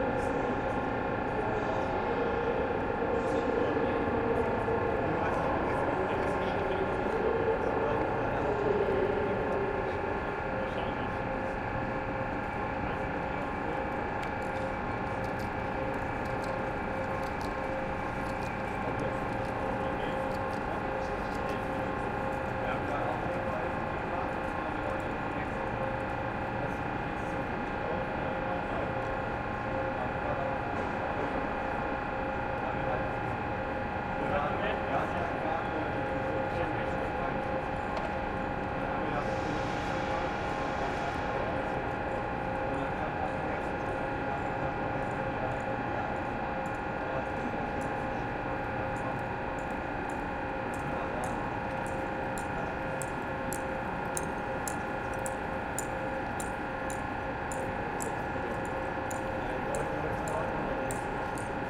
Trainstation, Flughafen, Squaire, Frankfurt am Main, Deutschland - Empty trainstation with some voices
In this recording a man is asking for money: Haben Sie vielleicht an Finanzen, was nur durch den Tag helfen könnte. And later: Alles ein bischen haarig so seit Corona. Na Guten Tag. Begging is forbidden at German train stations. The same guy will later be thrown out of Terminal 1 (also recorded, hear there...)
Hessen, Deutschland, 24 April 2020, 17:30